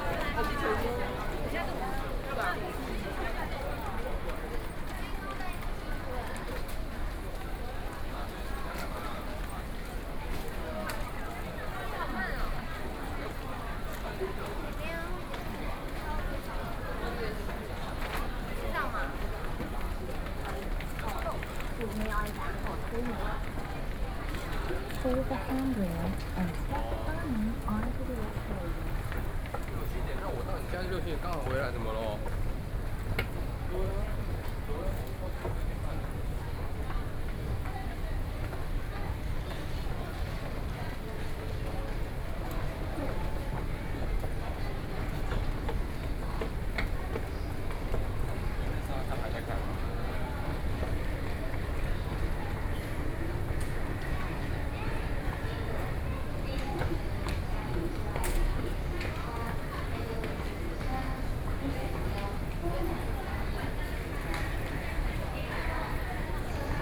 Shandao Temple Station, Taipei - Soundwalk
from Shandao Temple Station, to Taipei Main Station, Sony PCM D50 + Soundman OKM II, Best with Headphone( SoundMap20130616- 6)
台北市 (Taipei City), 中華民國, June 2013